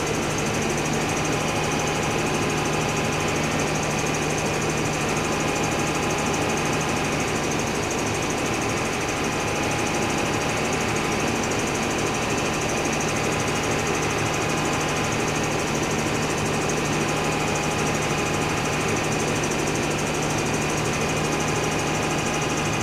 Mont-Saint-Guibert, Belgique - The dump
This is the biggest dump of Belgium. Here, a big noisy engine is turning.